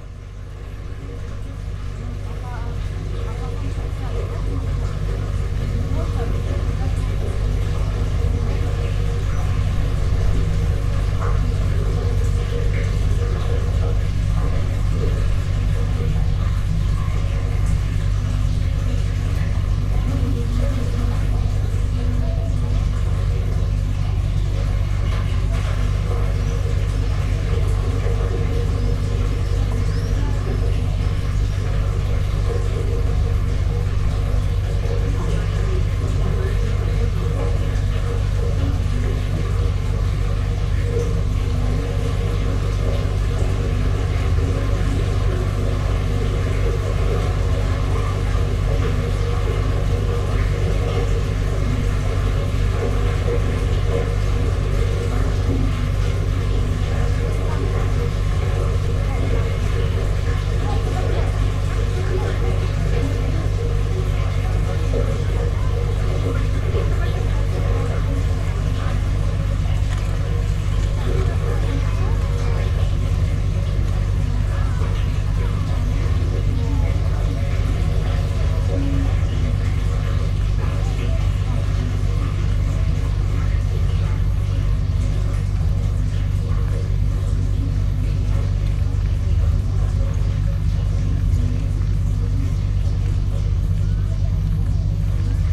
Trakai, Lithuania, listening in tube
small microphones in some ventilating tube going underground